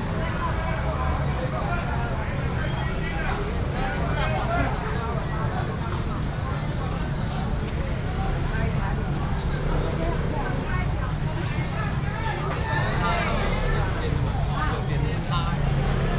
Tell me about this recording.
in the Evening market, Traffic sound